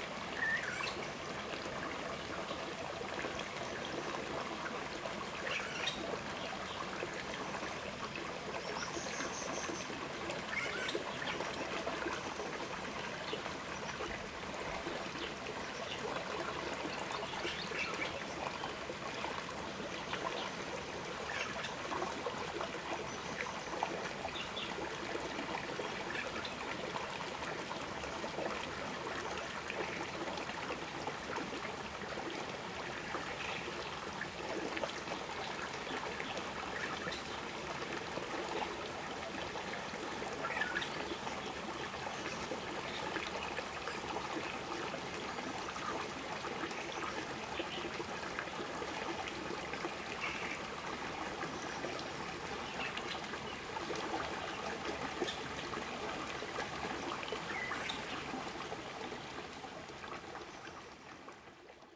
中路坑溪, 桃米里 Puli Township - Bird and Stream
Bird sounds, Stream
Zoom H2n MS+XY